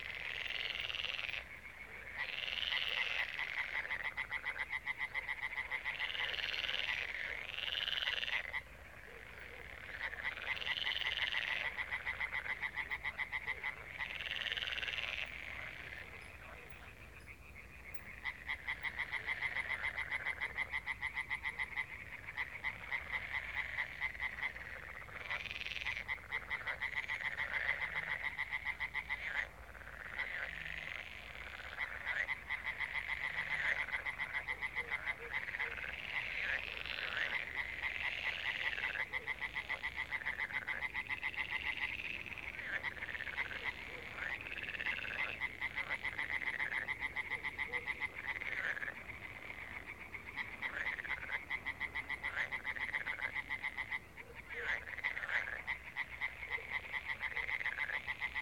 Sarnów, Poland - Stawy Sarnów (binaural)
evening tumult of water zoo.
frogs
26 May 2016, 21:01